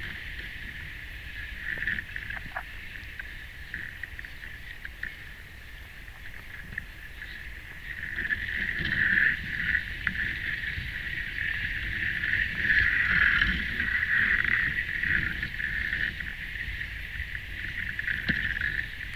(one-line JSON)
{"title": "Alausai, Lithuania, reeds underwater", "date": "2021-08-09 14:45:00", "description": "Hydrophone unwater between the reeds", "latitude": "55.63", "longitude": "25.70", "altitude": "137", "timezone": "Europe/Vilnius"}